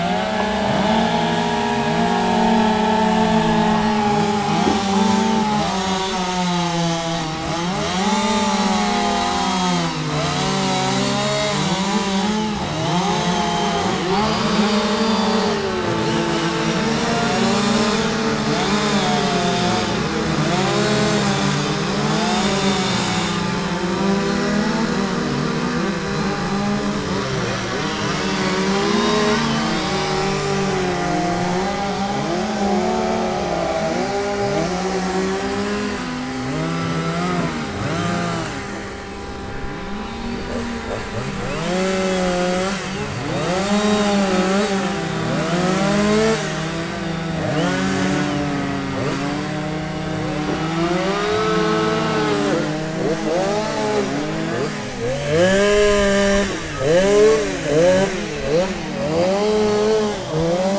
Stadtpark, Vienna, Austria - Chainsaw Competition, Vienna
Chainsawing competition in Vienna.
Soundman OKMII binaural microphones with Edirol R09
Bezirk Wien, Wien, Österreich, 10 September 2006, 11:50